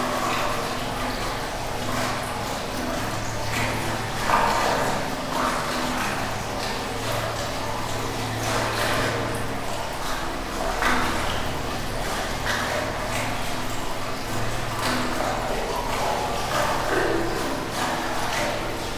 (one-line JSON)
{"title": "water reservoir - KODAMA document", "date": "2009-10-20 13:05:00", "description": "the sound inside of the village water reservoir - above la pommerie, france - recorded during KODAMA residency September 2009", "latitude": "45.68", "longitude": "2.14", "altitude": "783", "timezone": "Europe/Berlin"}